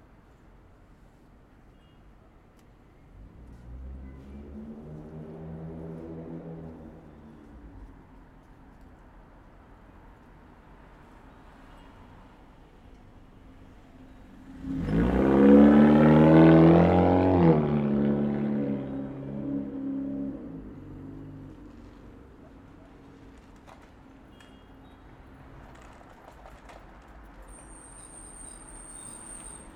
Woodward Ave, Ridgewood, NY, USA - Early Afternoon in Ridgewood, Queens
Traffic sounds on the intersection between Woodward Ave and Cornelia St. in Ridgewood, Queens.
United States, March 2022